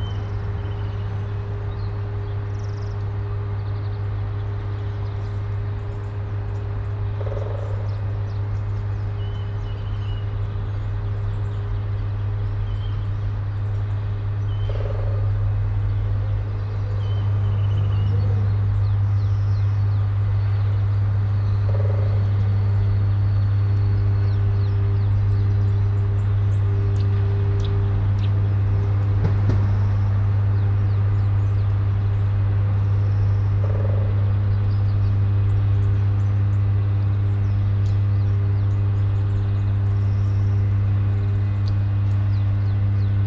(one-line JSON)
{"title": "all the mornings of the ... - mar 12 2013 tue", "date": "2013-03-12 08:24:00", "latitude": "46.56", "longitude": "15.65", "altitude": "285", "timezone": "Europe/Ljubljana"}